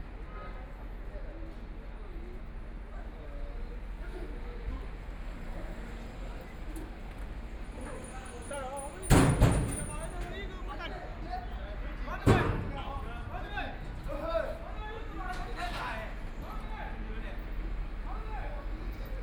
Huqiu Road, Shanghai - In the Street
walking in the Street, Binaural recording, Zoom H6+ Soundman OKM II